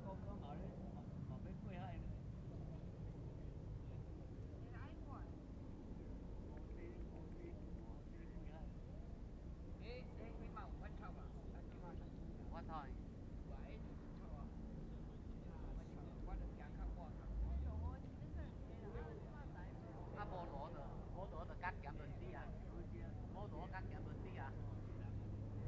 奎璧山地質公園, Penghu County - Tourists
At the beach, Tourists
Zoom H2n MS+XY